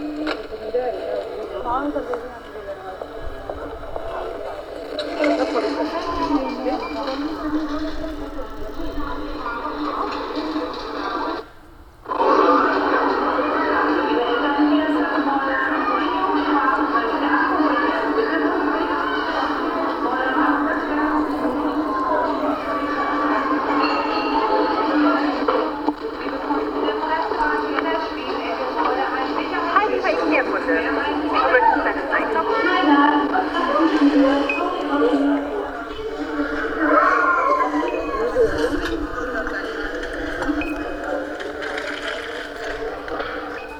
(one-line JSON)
{"title": "Sendung Radio FSK/Aporee in der Großen Bergstraße. Teil 3 - 1.11.2009", "date": "2009-11-01 21:00:00", "latitude": "53.55", "longitude": "9.94", "altitude": "34", "timezone": "Europe/Berlin"}